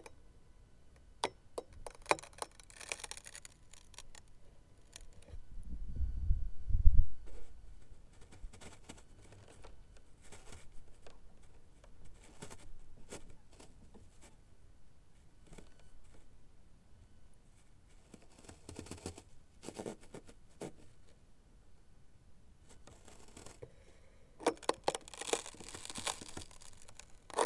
Caballero Fabriek, binckhorst, den Haag
Saving boat on ice, contact mics
Laak, The Netherlands, 6 February